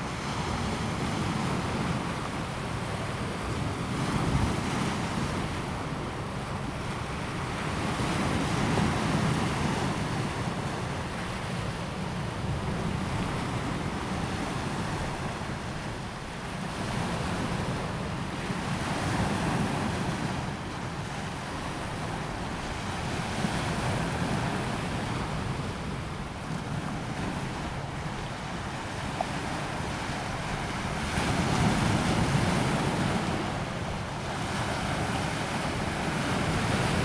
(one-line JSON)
{"title": "紅毛港遊憩區, Xinfeng Township - in the beach", "date": "2017-08-26 13:09:00", "description": "in the beach, Seawater high tide time, sound of the waves\nZoom H2n MS+XY", "latitude": "24.92", "longitude": "120.97", "altitude": "6", "timezone": "Asia/Taipei"}